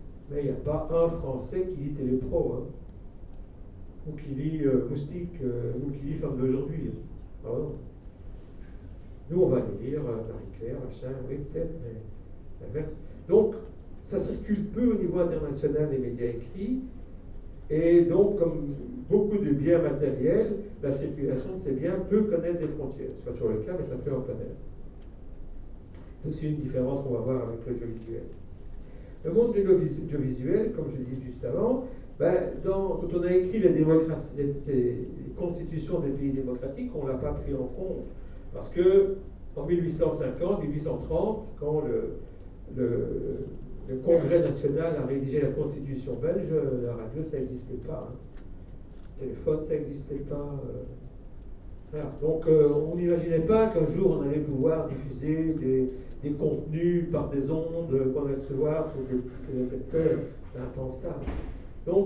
Centre, Ottignies-Louvain-la-Neuve, Belgique - A course of medias
In the very big Jacques Moelaert auditoire, a course about medias.